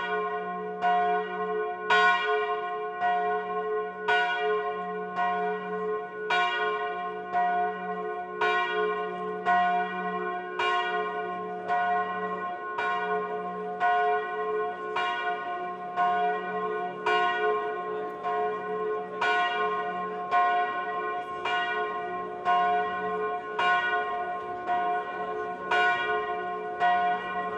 Rue du Bourg, Messimy-sur-Saône, France - Sortie de messe de mariage - volée de cloche et applaudissement - départ des mariés.
Messimy-sur-Saône - place de l'église - 17/09/2022 - 13h
Sortie de cérémonie de mariage : volée de cloche et applaudissements - départ des mariés.
Pour Malo et Belén, en remerciement pour cette belle journée.
ZOOM F3 + Audio Technica BP4025
France métropolitaine, France, 17 September, 13:00